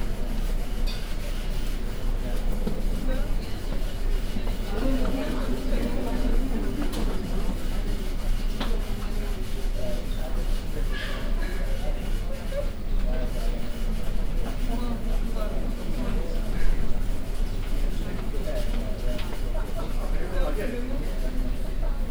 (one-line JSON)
{"title": "budapest, airport, departure shopping zone", "description": "at the airport, terminal 1 in the shopping zone of the deaprture area\ninternational city scapes and social ambiences", "latitude": "47.44", "longitude": "19.22", "altitude": "135", "timezone": "Europe/Berlin"}